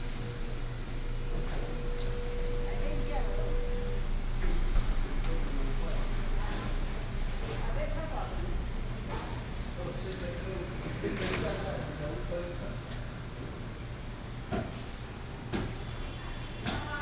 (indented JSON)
{
  "title": "恆春公有市場, Hengchun Township - Public retail market",
  "date": "2018-04-02 16:10:00",
  "description": "In the Public retail market, traffic sound",
  "latitude": "22.00",
  "longitude": "120.75",
  "altitude": "23",
  "timezone": "Asia/Taipei"
}